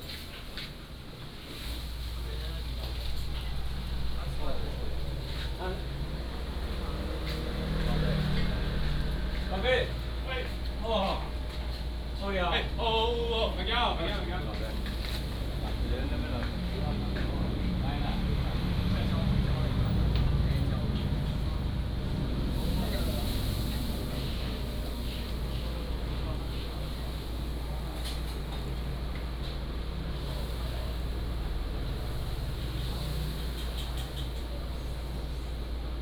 集成飯店, Jincheng Township - At the restaurant
At the restaurant
福建省, Mainland - Taiwan Border, 2 November 2014, 19:03